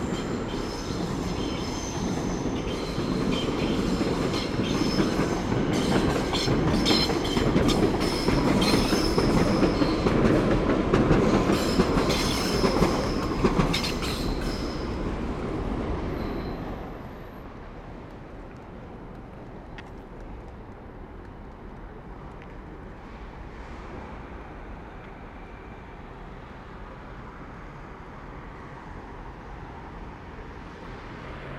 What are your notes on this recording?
On the morning, somebody is cleaning the street. Metro and trains are passing by with big urban noises. At the end, walking by the street, I go inside the Paris gare du Nord station.